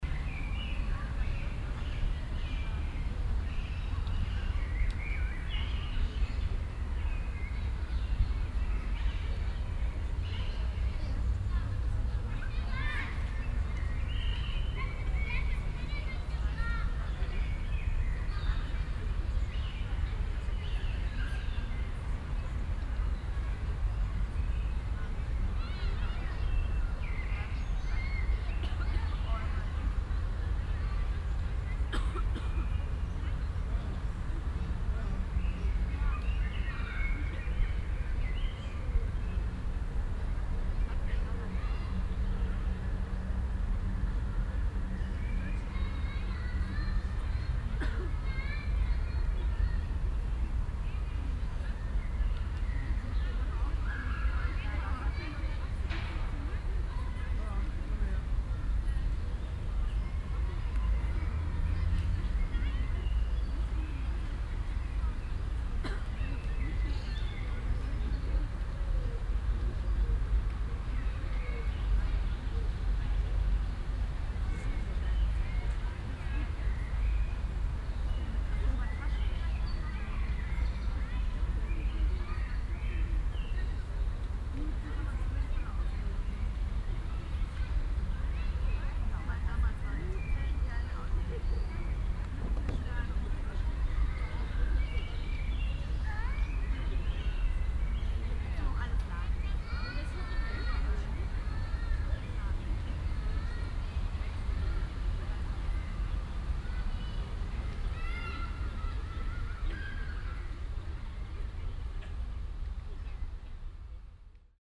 cologne, stadtgarten, mittlere wiese, unter baum
stadtgarten park - mittlere wiese - unter kleinerem baum - baum art: berg ahorn - nachmittags
projekt klang raum garten - soundmap stadtgarten